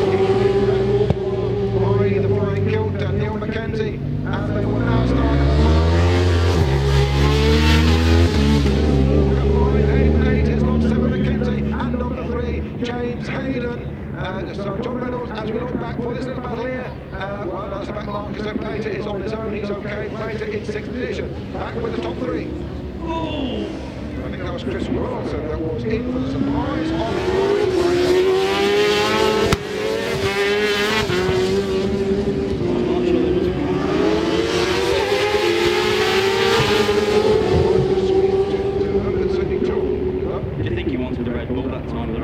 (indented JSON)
{
  "title": "Silverstone Circuit, Towcester, United Kingdom - British Superbikes 2000 ... race two ...",
  "date": "2000-07-02 15:30:00",
  "description": "British Superbikes 2000 ... race two ... one point stereo mic to minidisk ...",
  "latitude": "52.07",
  "longitude": "-1.02",
  "altitude": "152",
  "timezone": "Europe/London"
}